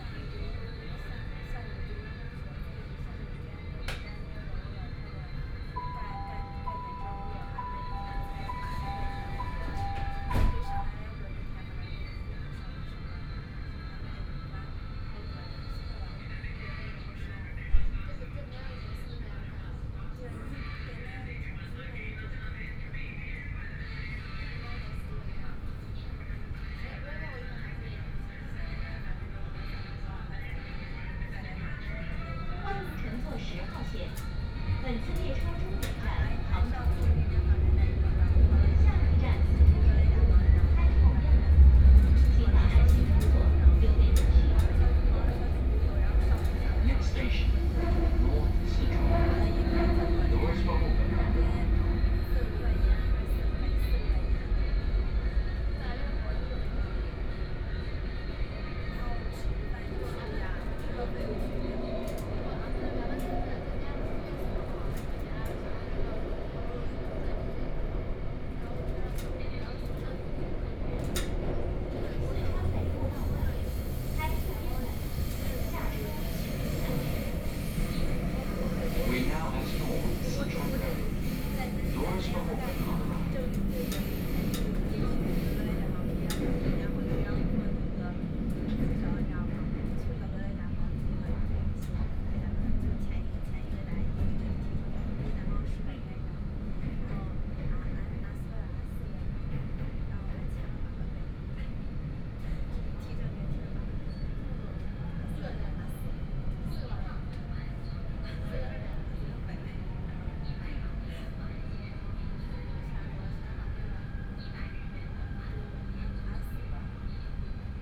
Hongkou District, Shanghai - Line 10 (Shanghai Metro)
from Siping Road Station to Tiantong Road Station, Binaural recording, Zoom H6+ Soundman OKM II
Hongkou, Shanghai, China